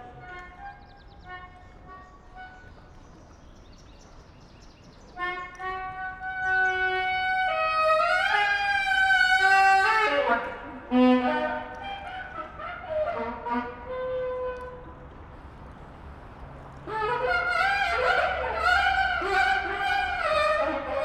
Berlin, Elsenbrücke, a lonely saxophon player practising under the bridge.
(tech note: SD702, Audio Technica BP4025)